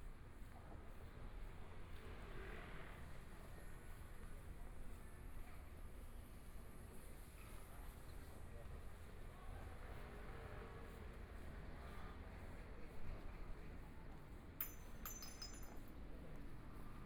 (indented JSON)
{
  "title": "中山區新喜里, Taipei City - In the Street",
  "date": "2014-02-15 17:35:00",
  "description": "walking In the Street, Traffic Sound, Binaural recordings, Zoom H4n+ Soundman OKM II",
  "latitude": "25.07",
  "longitude": "121.53",
  "timezone": "Asia/Taipei"
}